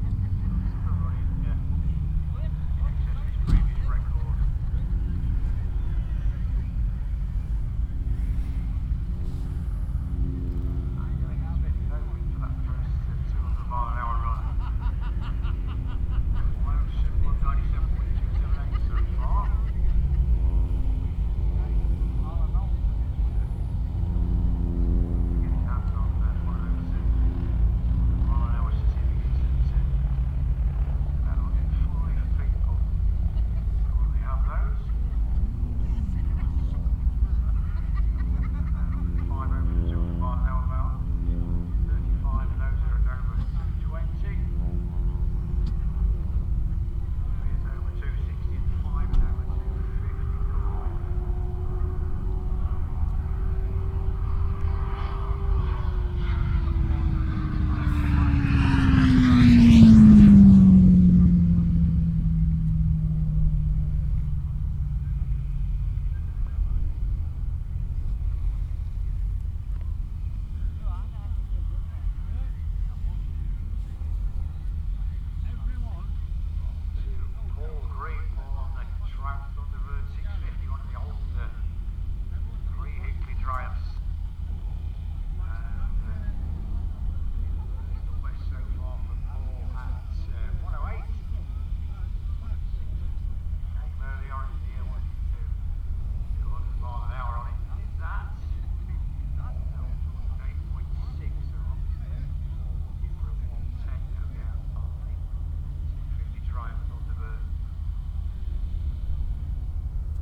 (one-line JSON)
{"title": "Glenshire, York, UK - Motorcycle Wheelie World Championship 2018...", "date": "2018-08-18 15:25:00", "description": "Motorcycle Wheelie World Championship 2018 ... Elvington ... Standing Start 1 Mile ... open lavalier mics clipped to sandwich box ... positioned just back of the timing line finish ... blustery conditions ... all sorts of background noise ...", "latitude": "53.93", "longitude": "-0.98", "altitude": "16", "timezone": "Europe/London"}